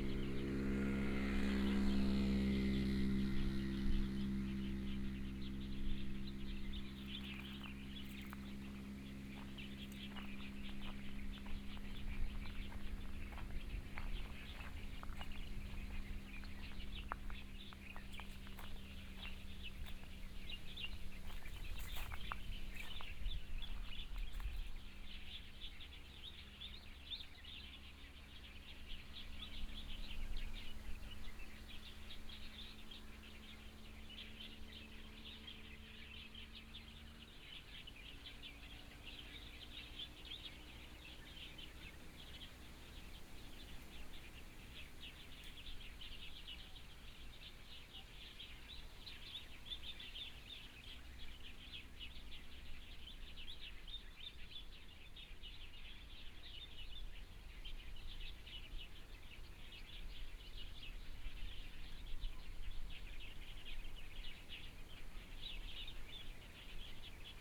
Zhiben, Taitung City - Birds singing
Traffic Sound, Birds singing, Sparrow, Binaural recordings, Zoom H4n+ Soundman OKM II ( SoundMap20140117- 5)
Taitung City, Taitung County, Taiwan, 17 January